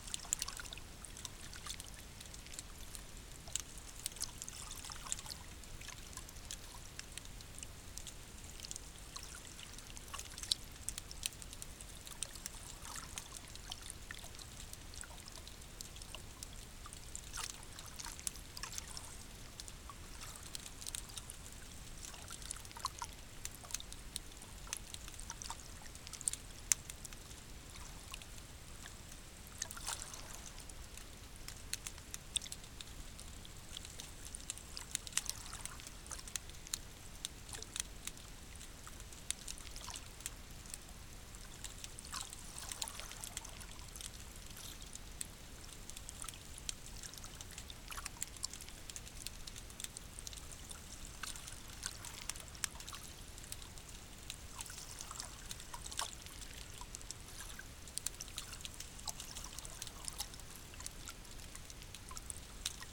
hydrophone recording on Heybeliada island near Istanbul